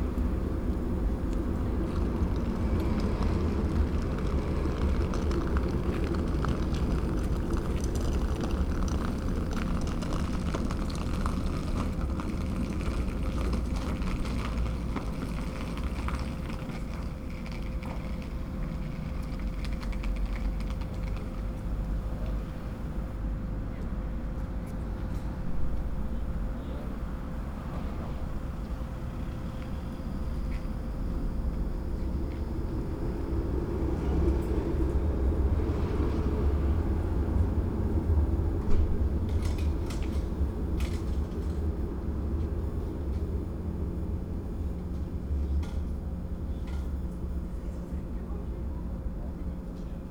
Linz, Österreich - bhf. linz-urfahr
bahnhof linz-urfahr
Linz, Austria, 6 January 2015, 17:15